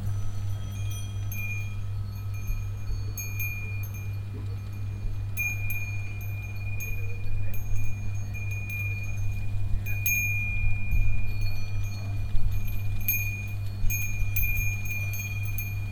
boxberg, transnaturale, installation, klangplateau - boxberg, bärwalder see, bell installation